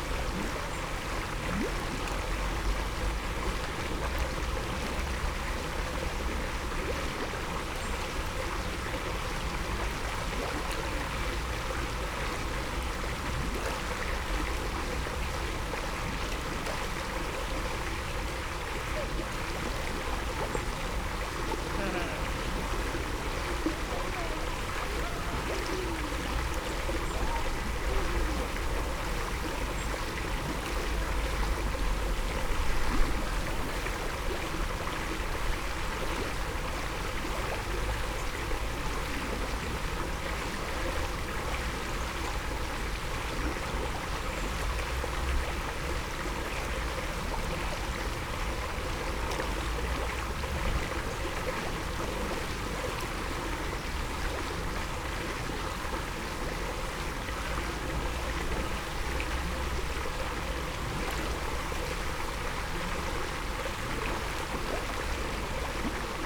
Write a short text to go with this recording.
overflow and uprising ... alnwick gardens ... open lavaliers clipped to sandwich box ... placed above one of four outflows of a large man made pond ... also water welling up from the middle of the pool ... only one chance to record so includes the visits of numerous folk ...